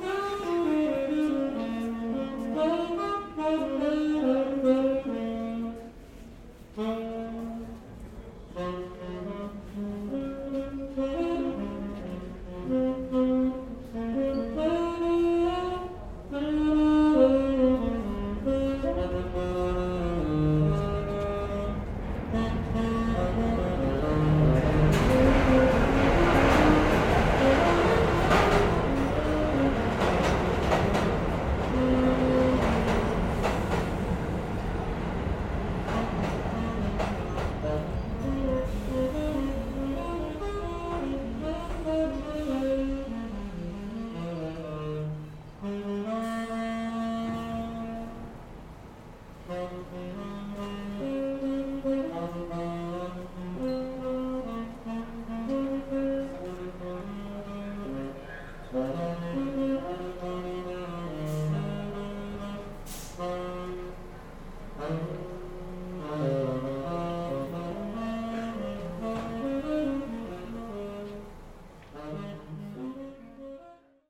14 St - Union Sq, New York, NY 10003, USA - Subway musician at 14 Street–Union Square Station
Subway musician at 14 Street–Union Square Station.
Announcements and the Q train arriving.
United States, 28 February 2022, 16:30